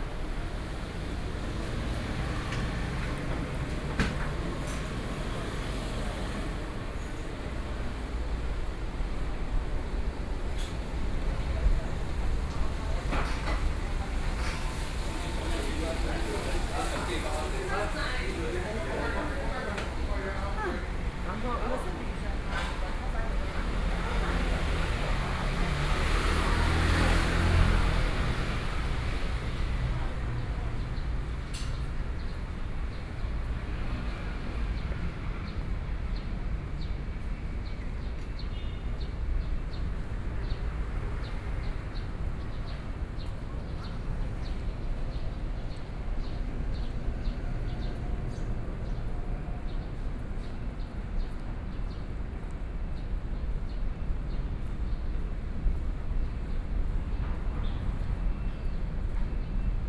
中山區聚盛里, Taipei City - walking in the Street
walking in the Street, Birdsong, Various shops sound, Traffic Sound